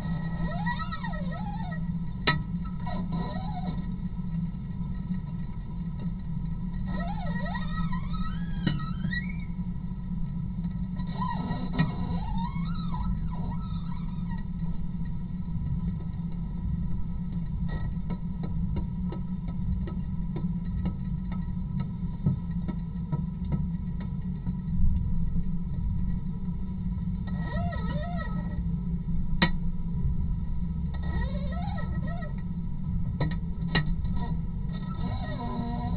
yard window - rain drops, glass bowl, plates and cups